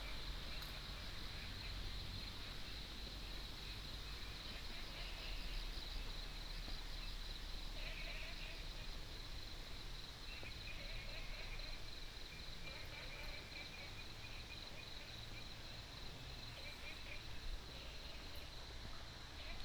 桃米溪, Puli Township - Frog sounds
Frog sounds, Next to the stream
Puli Township, Nantou County, Taiwan